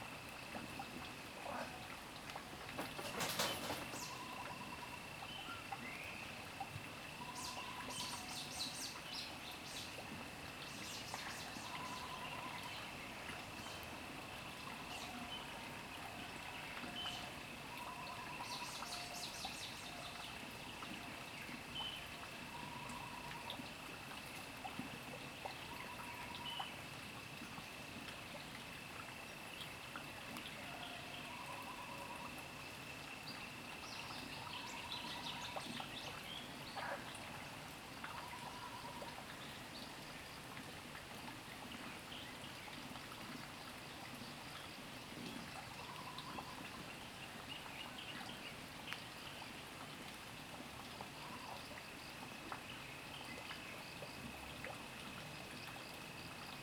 TaoMi Li., 青蛙阿婆的家 Puli Township - In the morning
Bird calls, Crowing sounds, The sound of water streams, Sound of insects
Zoom H2n MS+XY